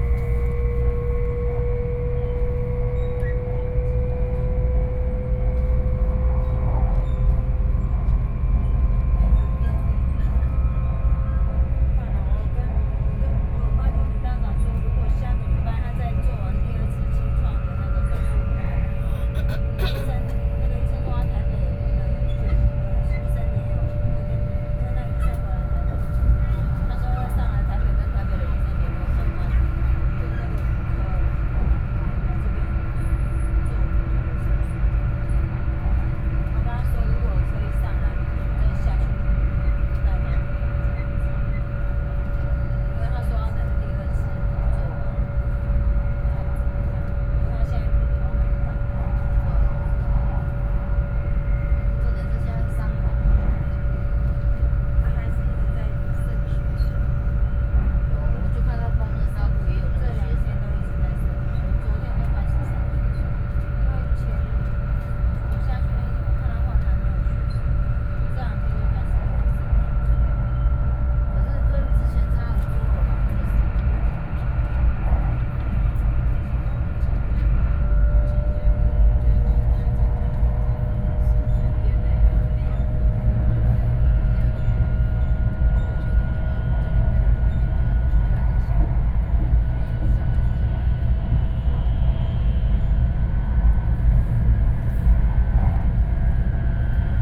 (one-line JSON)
{"title": "Yanchao, Kaoshiung - high-speed rail", "date": "2013-04-14 21:55:00", "description": "inside of the high-speed rail, Sony PCM D50 + Soundman OKM II", "latitude": "22.78", "longitude": "120.34", "altitude": "21", "timezone": "Asia/Taipei"}